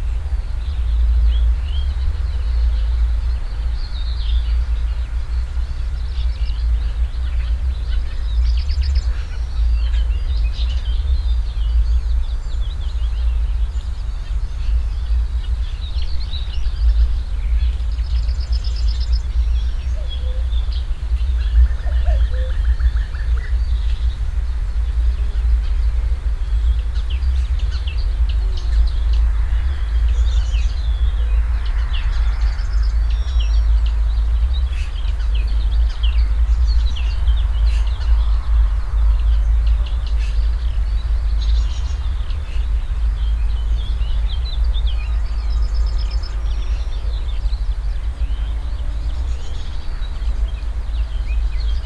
Morasko, Deszczowa Rd. - pre summer ambience